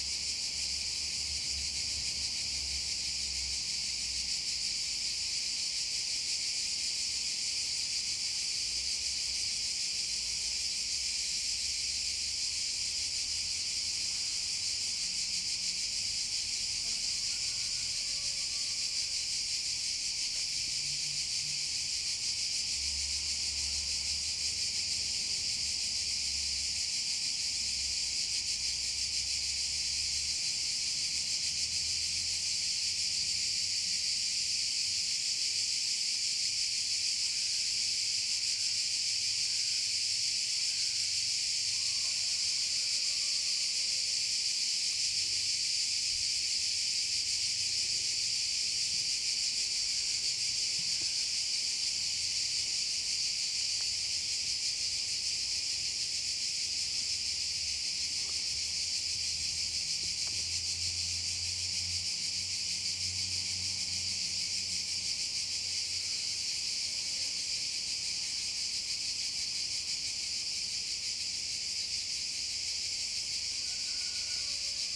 Livadia, Andros, Greece - Cicadas chorus
Cicadas surround us as we stand on a ancient stone bridge over the drying river bed.